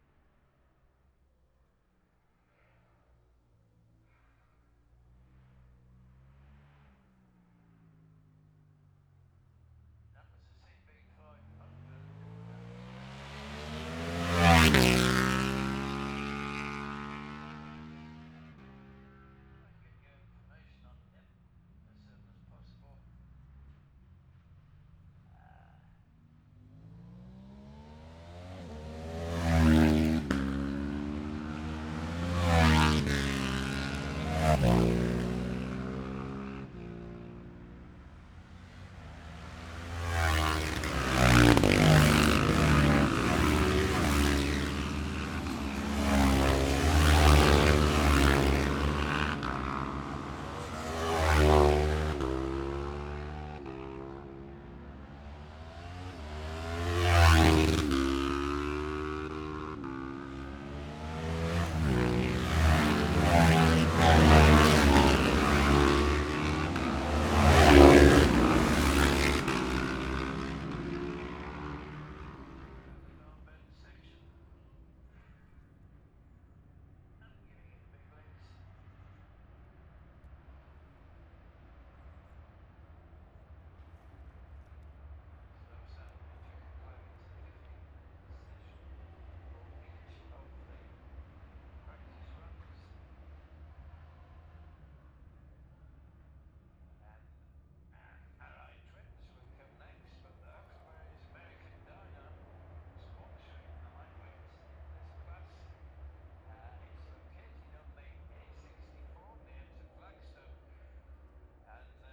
the steve henshaw gold cup 2022 ... twins practice ... dpa 4060s on t-bar on tripod to zoom f6 ...